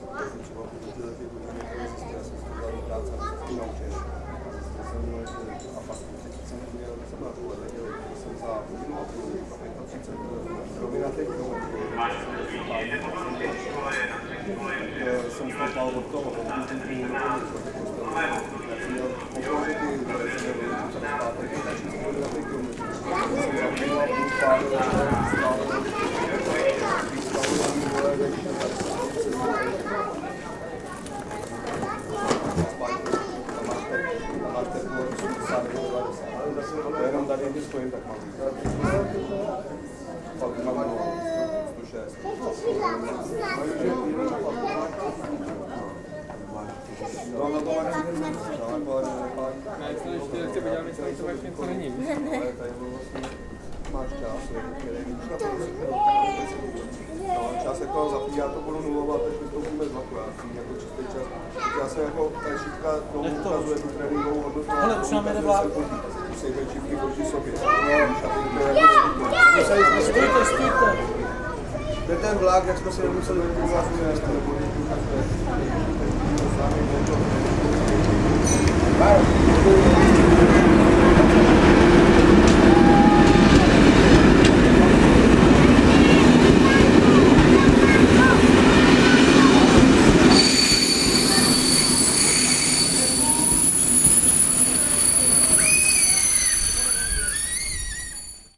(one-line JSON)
{"title": "Český Krumlov, Tschechische Republik - nádraží", "date": "2012-08-15 13:05:00", "description": "Český Krumlov, Tschechische Republik, nádraží", "latitude": "48.82", "longitude": "14.32", "altitude": "543", "timezone": "Europe/Prague"}